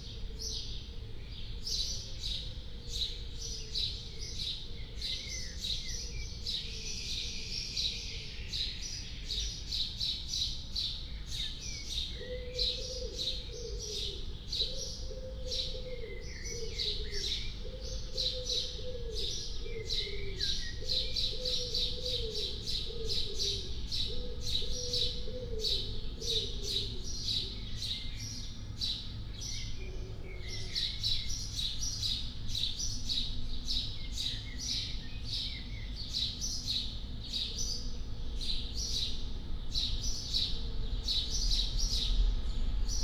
Berlin Bürknerstr., backyard window - early morning ambience
atmoshere in backyard, early morning
(Sony PCM D50, Primo EM172)
Berlin, Germany, 20 May 2019